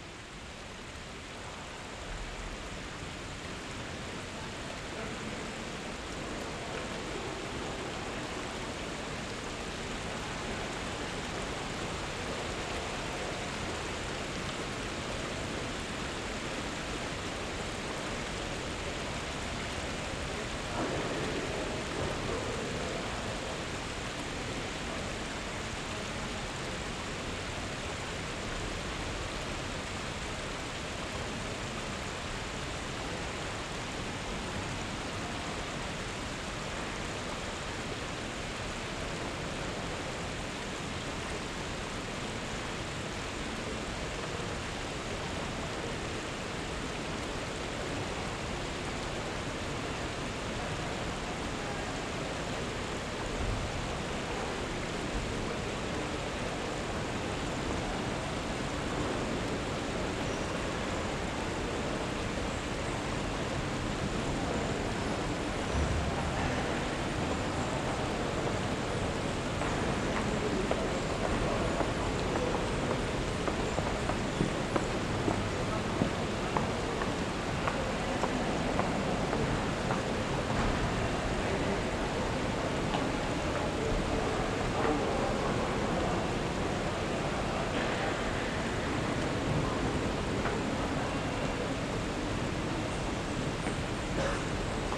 Wersten, Düsseldorf, Deutschland - Düsseldorf. Provinzial insurance building, main hall
Inside the main hall of the building of the insurance provider Provinzial. The high glas and stone walled hall is filled with living plants and trees and a water stream runs through the building. The sound of the water streaming by, the sounds of steps and people talking as they walk though the hall and the beeping signals of elevators.
This recording is part of the exhibition project - sonic states
soundmap nrw -topographic field recordings, social ambiences and art places
Düsseldorf, Germany, 11 December 2012, 11:30